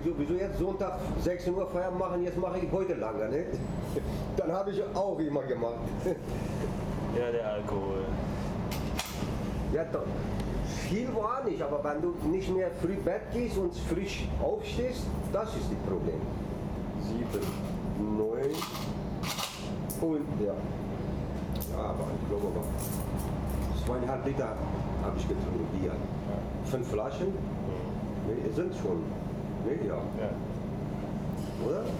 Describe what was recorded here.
owner of the kiosk explains that he had drunk too much beer the night before, the city, the country & me: april 28, 2011